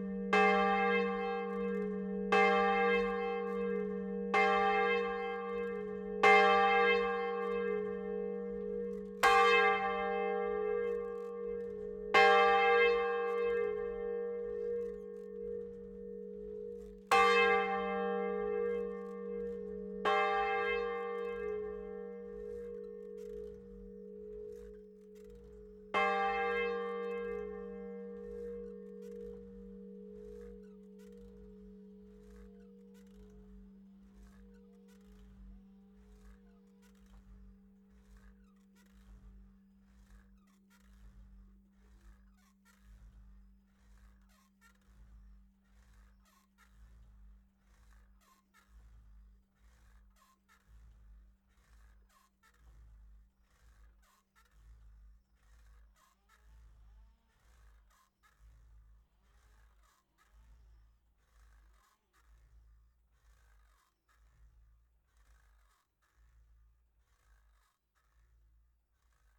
Rue Jean Jaurès, Haspres, France - Haspres - Département du Nord église St Hugues et St Achere - volée cloche Aîgüe.
Haspres - Département du Nord
église St Hugues et St Achere
volée cloche Aîgüe.
Hauts-de-France, France métropolitaine, France, 19 April 2021